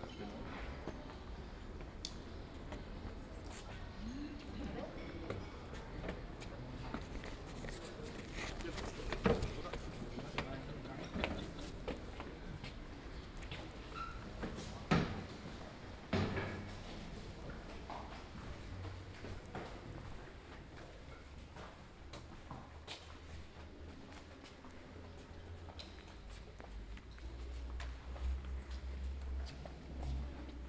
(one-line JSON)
{"title": "Markt, Leipzig, Germania - “Sunday summer music-walk in Leipzig: soundwalk”", "date": "2020-07-19 12:18:00", "description": "“Sunday summer music-walk in Leipzig: soundwalk”\nSunday, July 19th 2020, soundwalk Marktplatz, Thomaskirke, Opera Haus, Gewandhaus, Nikolaikirke.\nStart at 00:18 p.m. end at 01:28 p.m., total duration of recording 01:09:48\nBoth paths are associated with synchronized GPS track recorded in the (kmz, kml, gpx) files downloadable here:", "latitude": "51.34", "longitude": "12.37", "altitude": "126", "timezone": "Europe/Berlin"}